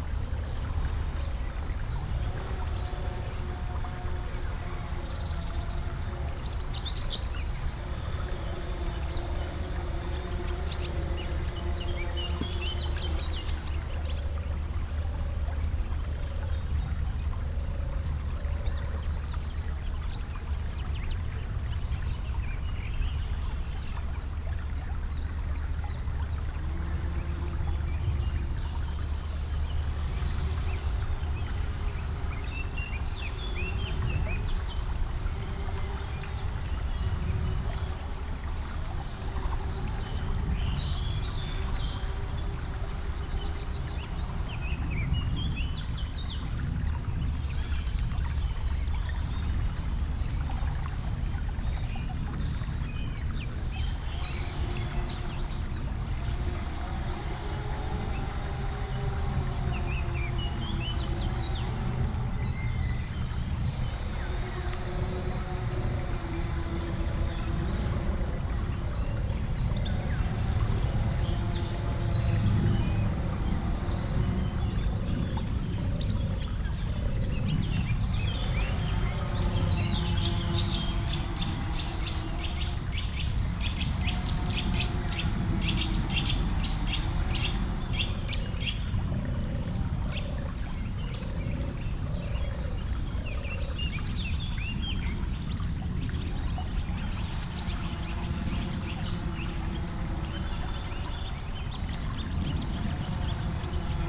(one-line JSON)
{"title": "Crnomelj, Slovenija - At the spring of Lahinja river", "date": "2012-06-28 08:00:00", "description": "river spring, birds and wood sawing", "latitude": "45.51", "longitude": "15.20", "altitude": "146", "timezone": "Europe/Ljubljana"}